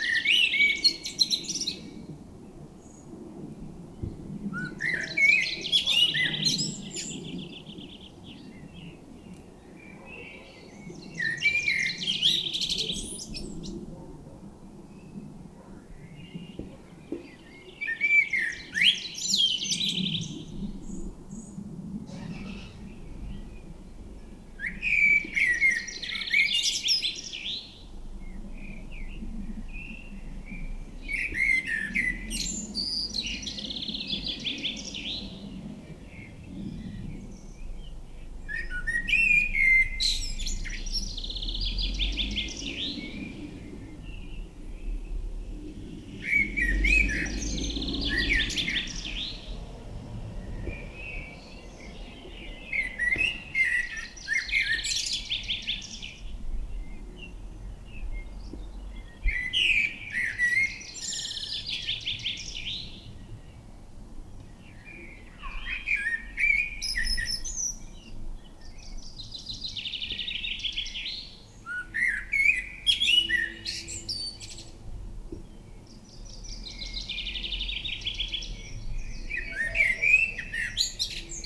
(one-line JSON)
{"title": "Mont-Saint-Guibert, Belgique - Blackbird", "date": "2016-05-26 20:40:00", "description": "In the cemetery of Mont-Saint-Guibert, a blackbird is giving a beautiful concert. Trains are omnipresent near everywhere in this small city.", "latitude": "50.64", "longitude": "4.61", "altitude": "81", "timezone": "Europe/Brussels"}